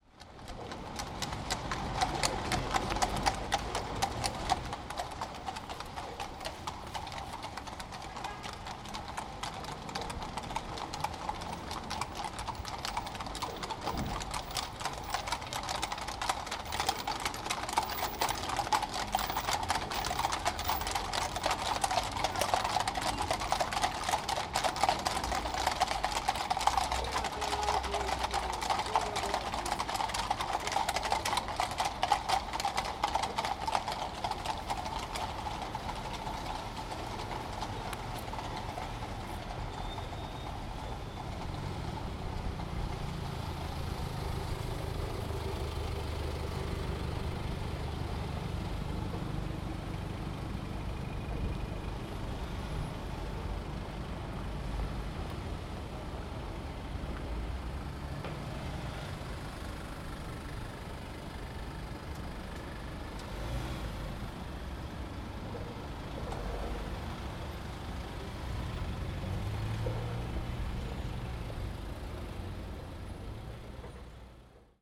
Greycoat Place - Military Horses
This is what I love about London. An impromptu recording as I was not expecting military horses being brought along Greycoat Place. For every mounted horse here were two or three unmounted horses and the traffic behind very much behaving itself!